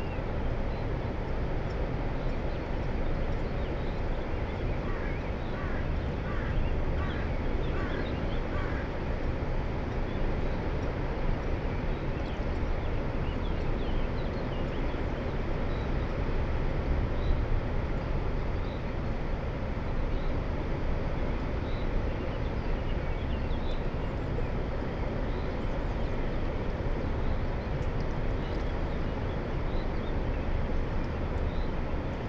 at the edge, mariborski otok, river drava - water flow, dam outlet, distant thunder
(Sony PCM D50, Primo EM172)
Maribor, Slovenia, 10 April 2017, 17:35